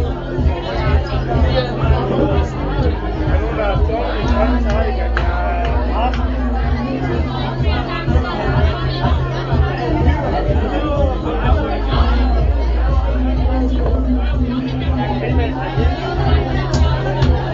Das große Blabla Teil 2
randomly passed student party, entered and got drunk. the ever amplified volume of sounds having to surpass each other will definitely end up in terrible humanoid noise.